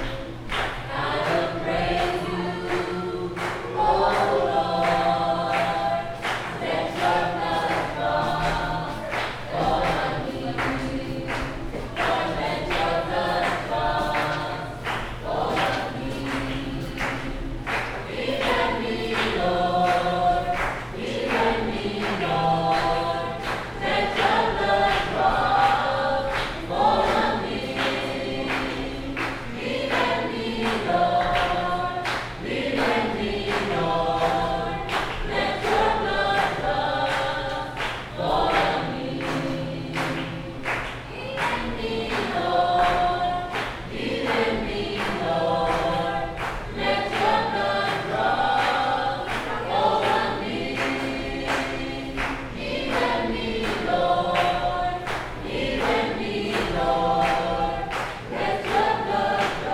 neoscenes: baptismal singing at the YMCA
30 September 2007, ~13:00